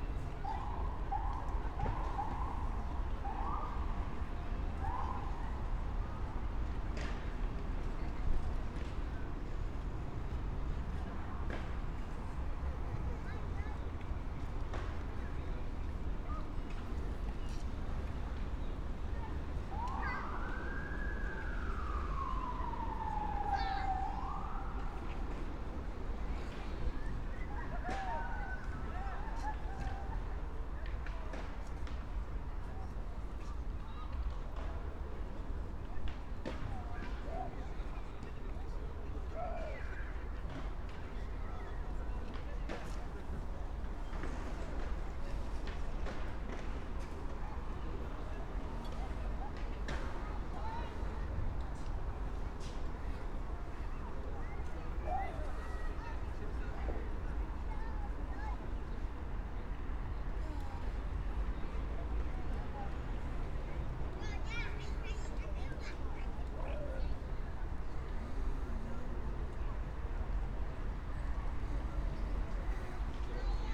Brno, Lužánky - park ambience
18:31 Brno, Lužánky
(remote microphone: AOM5024/ IQAudio/ RasPi2)
Jihomoravský kraj, Jihovýchod, Česko, August 2021